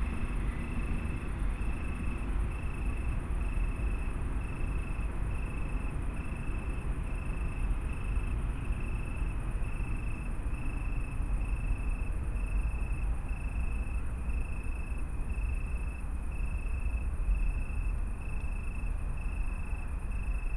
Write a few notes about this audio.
Köln, Stadtgarten, night ambience with crickets, jogger, train and 10pm churchbells, (Sony PCM D50, Primo EM172)